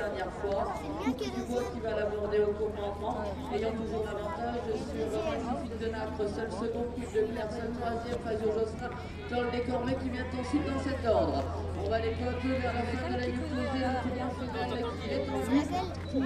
Biarritz, hippodrome des fleurs
hippodrome, course de chevaux, horse race track, horse races
Biarritz, France, 7 July 2010, 20:13